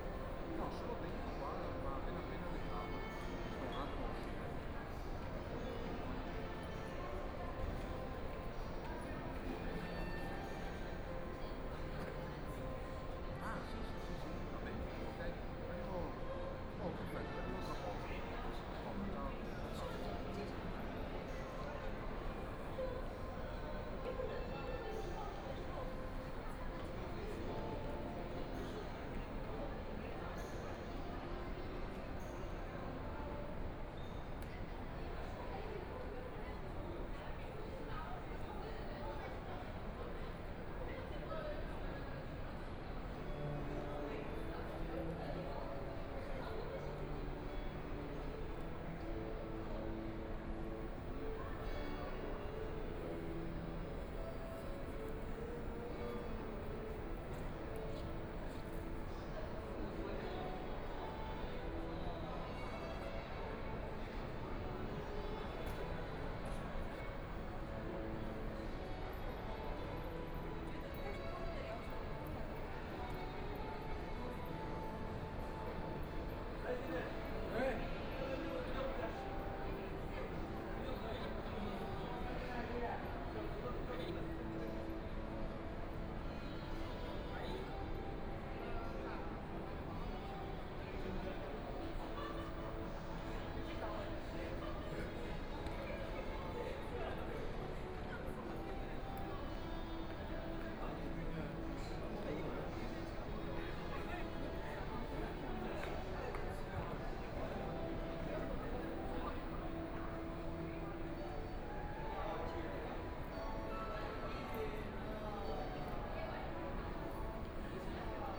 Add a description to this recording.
Sitting inside mall, Binaural recording, Zoom H6+ Soundman OKM II